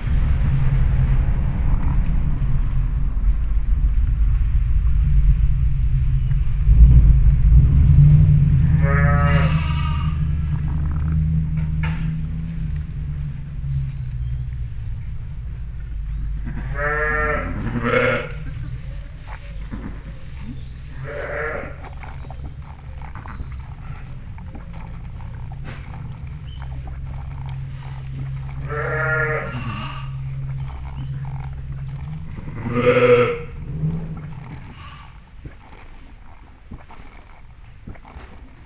New Born Lambs in the lambing shed. - Bredenbury, herefordshire, UK
2 hour old lamb with its mother in the lambing shed with others. Recorded on the floor of the shed very close to the lamb and ewe with a Sound Devices MIx Pre 3 and 2 Beyer lavaliers.